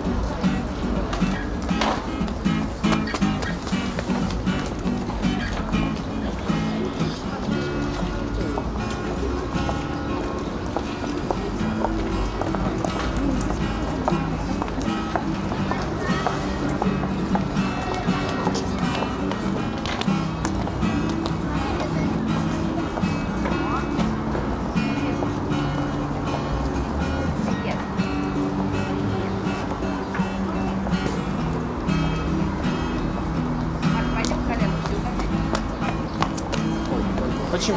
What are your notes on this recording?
Moscow Immaculate Conception Catholic Cathedral yard, A boy plaing guitar, Family Day